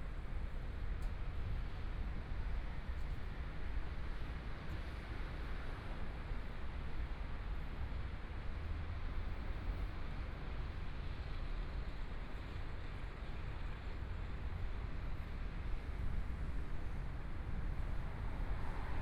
Jianguo N. Rd., Taipei - walking on the Road
walking on the Road, Traffic Sound, Motorcycle Sound, Pedestrians on the road, Binaural recordings, Zoom H4n+ Soundman OKM II
Zhongshan District, Taipei City, Taiwan, 8 February 2014, 14:26